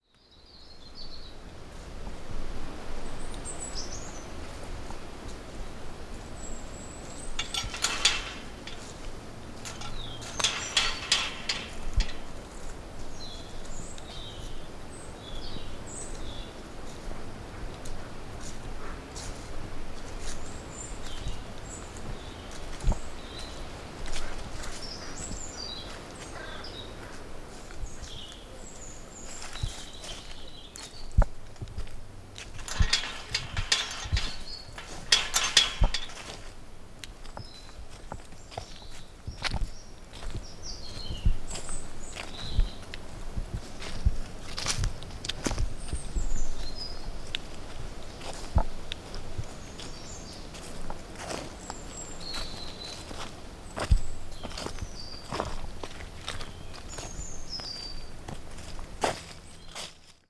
Part of the Sounds of the Neolithic SDRLP project funded by The Heritage Lottery Fund and WDDC.